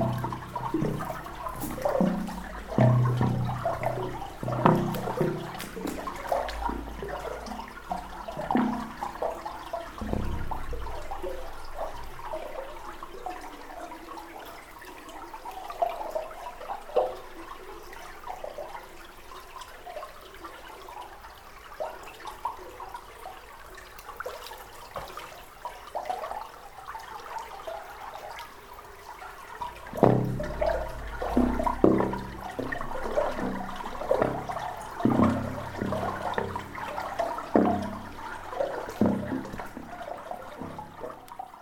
Differdange, Luxembourg - The singing pipe
The same pipe as above, but 5 meters more far and playing a little bit with water. I'm in love with this pipe !
15 February 2015, 21:25